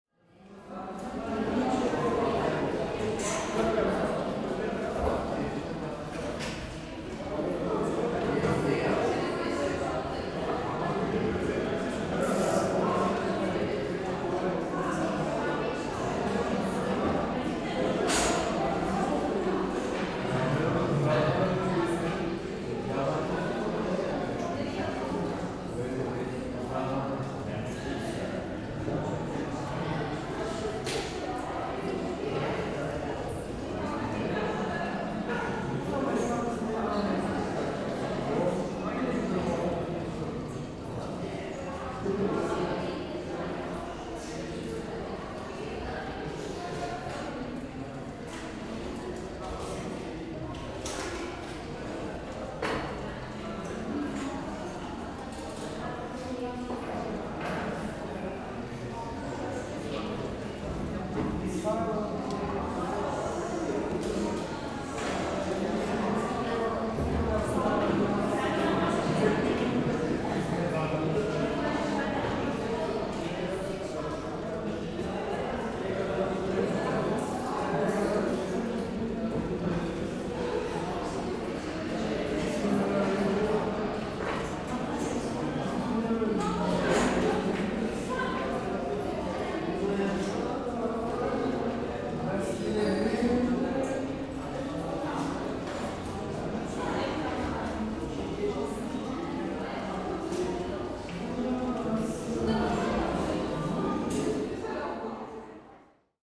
ITÜ Architechture bldg survey, Studio 1
sonic survey of 18 spaces in the Istanbul Technical University Architecture Faculty
6 March 2010